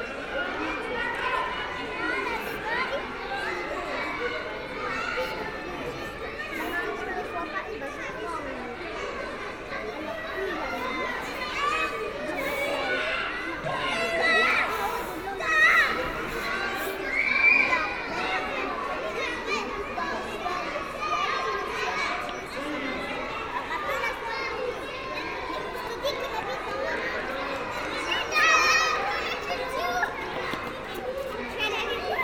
{"title": "Porte Saint-Denis, Paris, France - Children in a playground", "date": "2017-05-02 13:15:00", "description": "In the Jardin Saint-Lazare school, young children are playing in a large playground during the lunchtime.", "latitude": "48.88", "longitude": "2.35", "altitude": "49", "timezone": "Europe/Paris"}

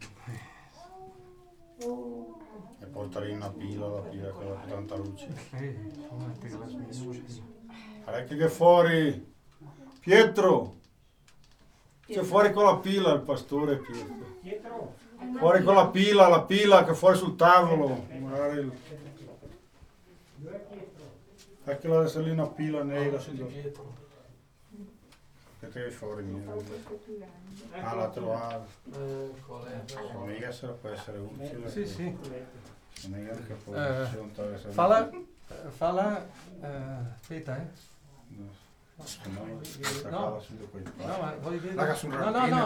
{"title": "Valdidentro SO, Italia - shepherd says to underground", "date": "2012-08-19 14:00:00", "latitude": "46.52", "longitude": "10.36", "altitude": "2398", "timezone": "Europe/Rome"}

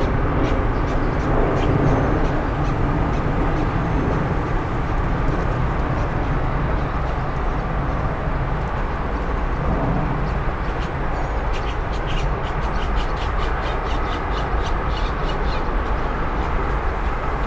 {"title": "Neils Thompson Dr, Austin, TX, USA - Marsh by Mopac and 183", "date": "2020-07-18 10:12:00", "description": "Olympus LS-P4 and LOM Usis, mounted in a tree. You can hear cicadas, grackles, water frogs, leaves in the wind, and marshlands, drowned out by the din of the nearby freeway intersection.", "latitude": "30.38", "longitude": "-97.73", "altitude": "230", "timezone": "America/Chicago"}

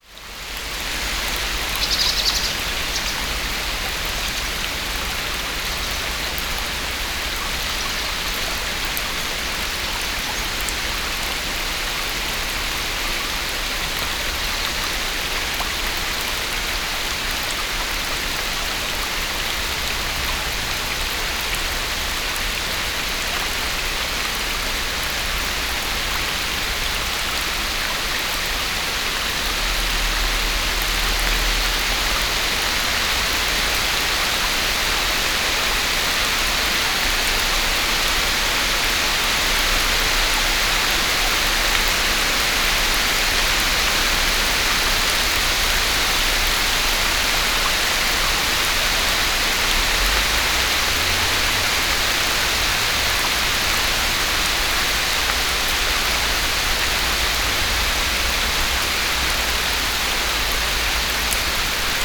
{"title": "Steinbachtal, rain, under high trees, WLD", "date": "2011-07-18 11:45:00", "description": "Steinbachtal, standing beside the brook under high trees, strong rain, WLD", "latitude": "51.39", "longitude": "9.63", "altitude": "233", "timezone": "Europe/Berlin"}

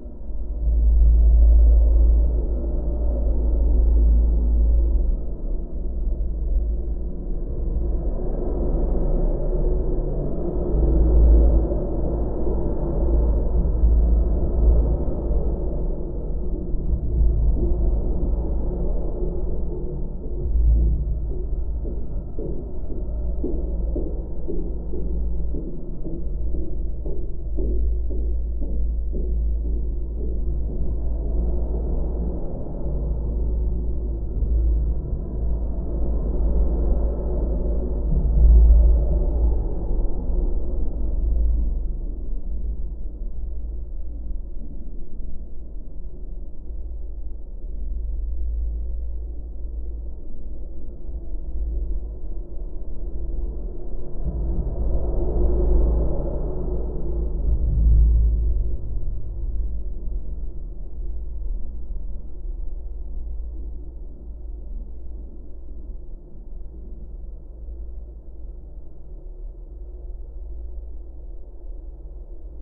{"title": "Vilnius, Lithuania, Zverynas bridge", "date": "2021-03-03 13:30:00", "description": "Geophone placed on metalic constructions of bridge.", "latitude": "54.69", "longitude": "25.26", "altitude": "89", "timezone": "Europe/Vilnius"}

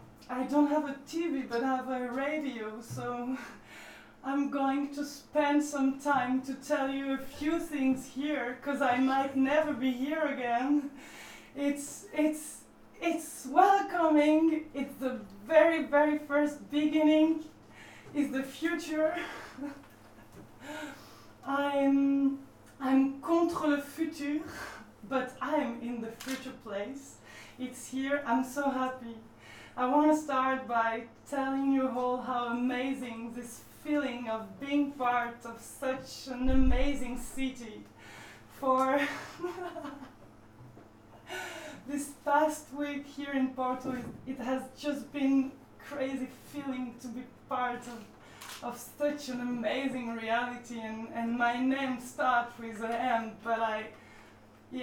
{"title": "porto, r. de passos manuel - futureplaces festival opening", "date": "2010-10-12", "description": "radio zero / radio futura opening broadcast of the futureplaces festival porto. marianne performing.", "latitude": "41.15", "longitude": "-8.61", "altitude": "100", "timezone": "Europe/Lisbon"}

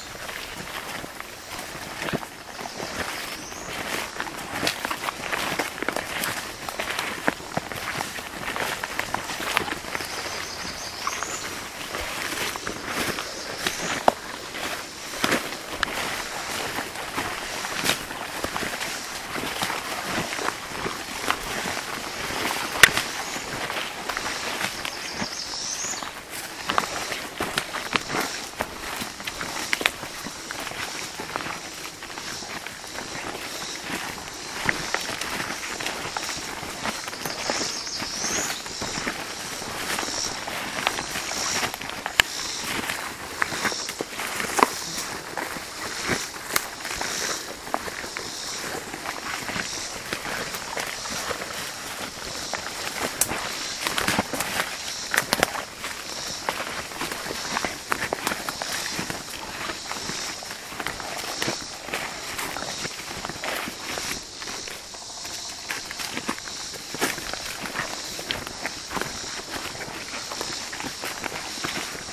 {"title": "Kentucky, USA - The Ground Beneath My Feet in Summer (WLD 2017)", "date": "2017-07-18 15:04:00", "description": "Sounds from hike through small patch of deciduous forest, adjacent to stream and rural road. Recorded mid-afternoon on hot, humid summer day. Among species heard: field sparrow (Spizella pusilla), Cope's gray tree frog (Hyla chrysoscelis). Sony ICD-PX312.", "latitude": "37.86", "longitude": "-85.00", "altitude": "235", "timezone": "America/New_York"}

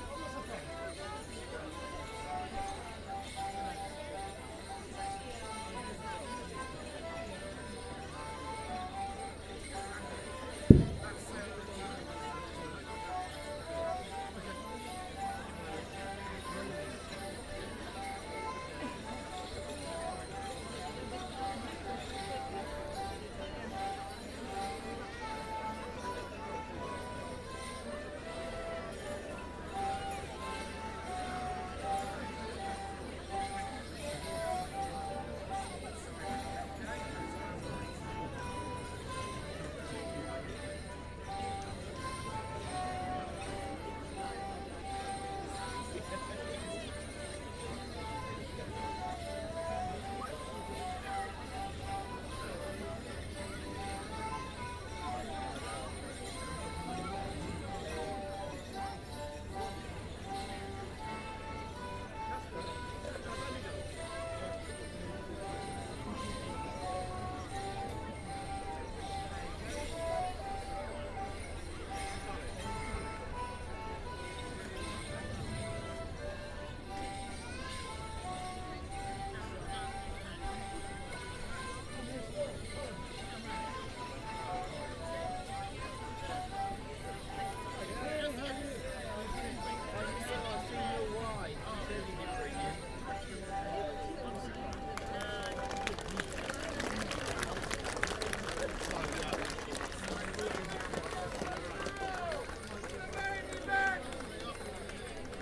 {"title": "The Street, South Stoke, UK - Crowning the May Queen", "date": "2017-05-01 13:38:00", "description": "This is the sound of the Kennet Morris Men and the children of South Stoke Primary School crowning the May Queen and officially declaring the beginning of the summer.", "latitude": "51.55", "longitude": "-1.14", "altitude": "47", "timezone": "Europe/London"}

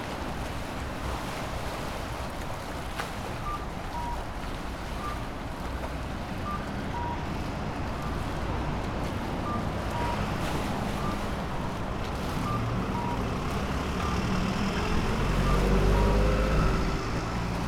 {"title": "Osaka, Nakanoshima district, one of the northern bridges - water splashes", "date": "2013-03-31 17:02:00", "description": "water splashing on the concrete walls of the canal accompanied by the sounds of pedestrian lights.", "latitude": "34.69", "longitude": "135.49", "timezone": "Asia/Tokyo"}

